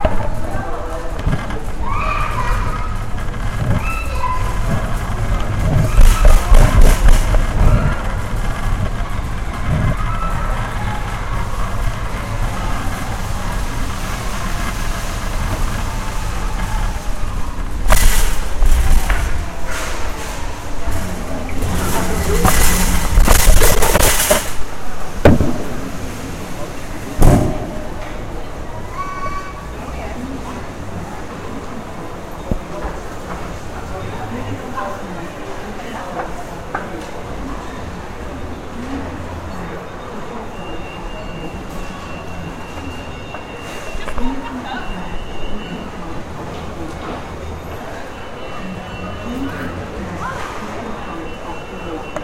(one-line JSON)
{"description": "New Tesco store opened November 2009 - sounds on moving walkway going in.", "latitude": "53.25", "longitude": "-1.42", "altitude": "74", "timezone": "Europe/London"}